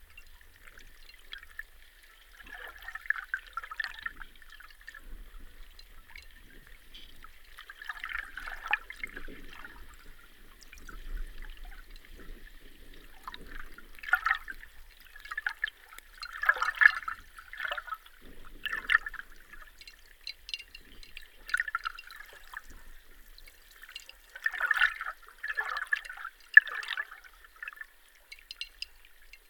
Dusetos, Lithuania, Sartai lake underwater listening
Hydrophone under the bridge on Sartai lake
Utenos apskritis, Lietuva